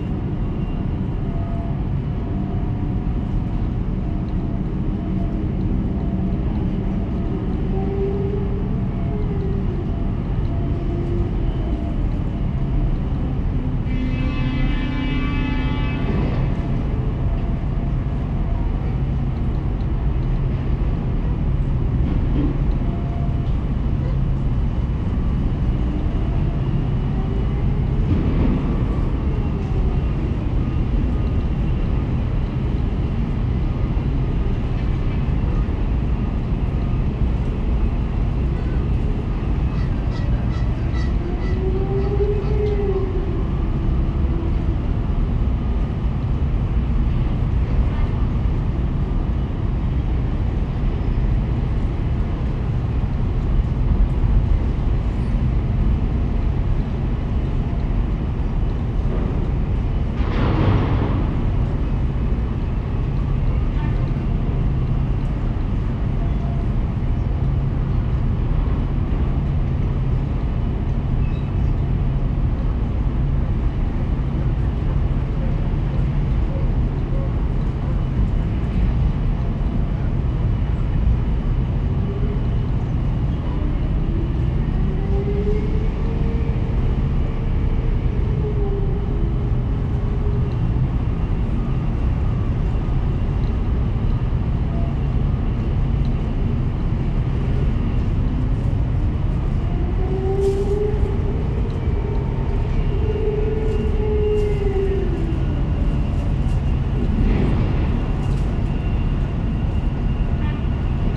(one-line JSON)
{"title": "Oakland Harbor, CA, USA - Middle Harbor Shoreline Park", "date": "2016-01-13 16:15:00", "description": "Recorded with a pair of DPA 4060s and a Marantz PMD 661", "latitude": "37.80", "longitude": "-122.33", "altitude": "2", "timezone": "America/Los_Angeles"}